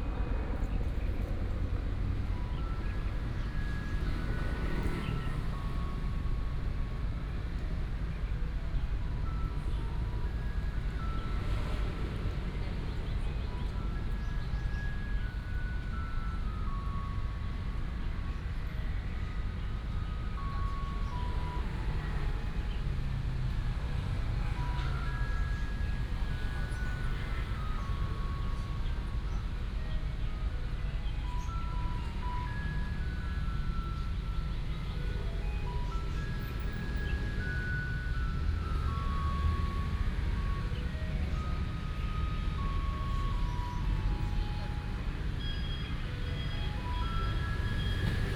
員林公園, Yuanlin City - in the Park
in the Park, Traffic sound, The helicopter flew through, sound of birds